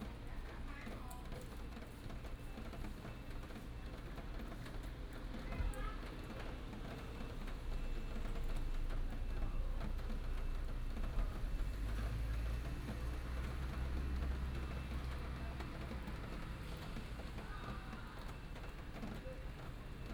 南島金崙診所, Jinlun, Taimali Township - rain
rain, traffic sound, Village Message Broadcast Sound
Binaural recordings, Sony PCM D100+ Soundman OKM II
Taitung County, Taiwan, 13 April 2018